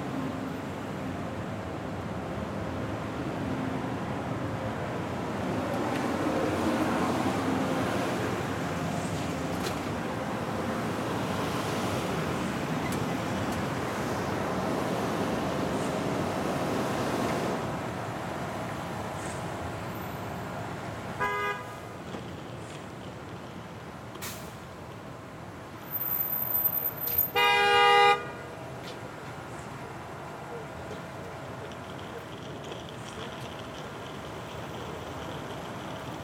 Grand Central - 42 St, New York, NY 10017, USA - Five blocks up Lexington Ave.
Five blocks up Lexington Ave.